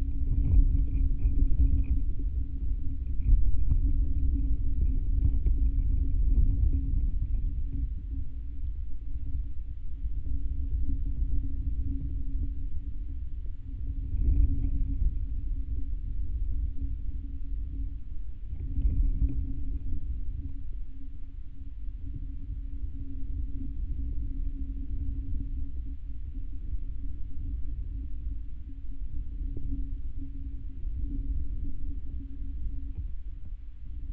Vyžuonos, Lithuania, on swamped lakeshore
Geophone sticked into swamped, slowly moving up and down, lakeshore.
Utenos rajono savivaldybė, Utenos apskritis, Lietuva, 20 August 2022